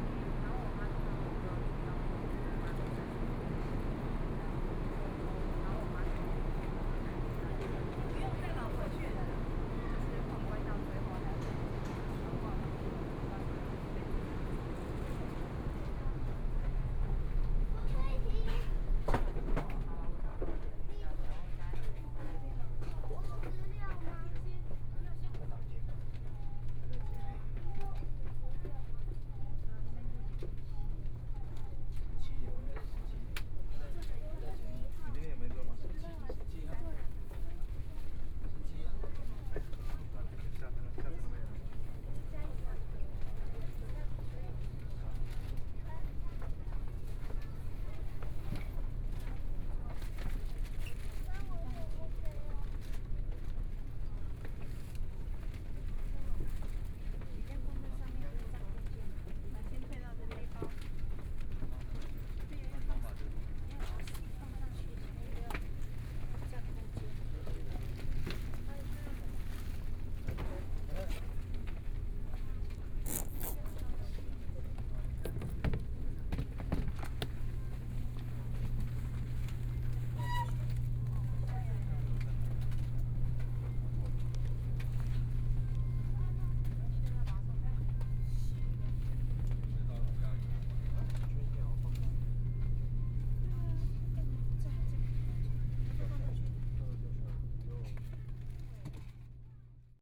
Chiayi Station, Taiwan High Speed Rail - On the platform
On the platform, Traveling by train, Train arrived, Zoom H4n+ Soundman OKM II
Chiayi County, Taiwan, February 2014